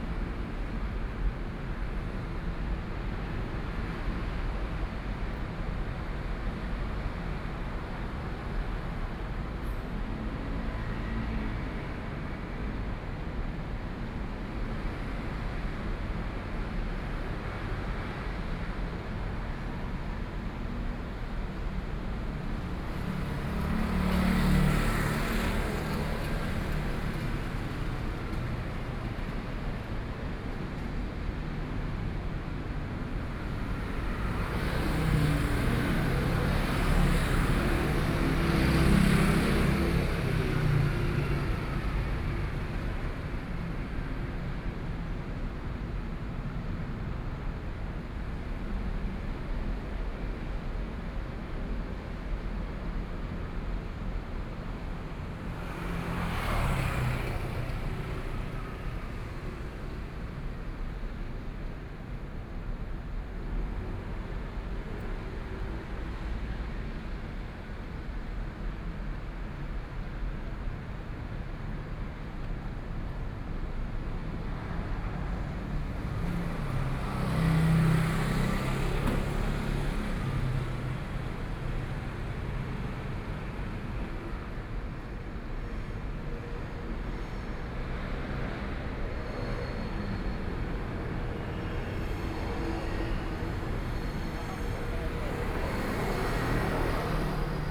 Hsinchu City, Taiwan - Traffic Noise
Train traveling through, Traffic Noise, Sony, PCM D50 + Soundman OKM II